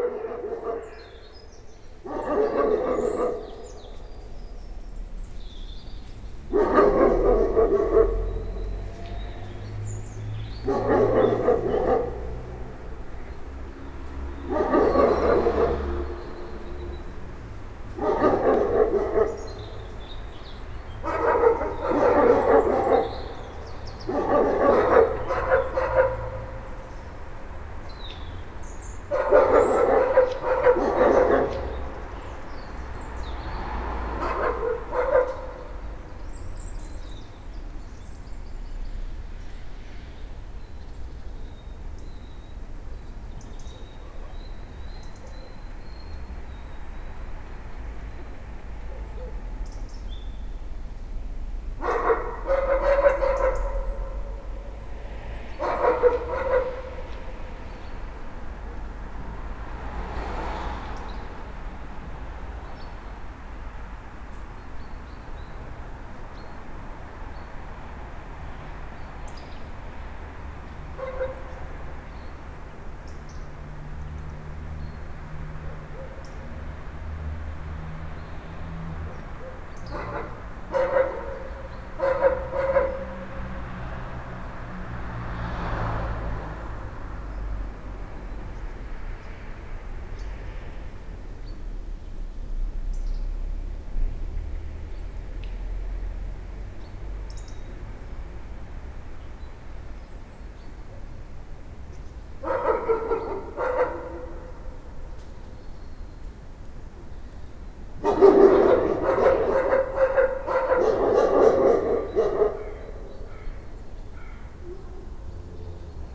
Hayange, France - Old dog and the old mine
Near to the Gargan mine in Hayange, an old but still nasty dog is barking. Lorraine area is so welcoming everytime we go here ! At the end of the recording, a goshawk is hunting crows.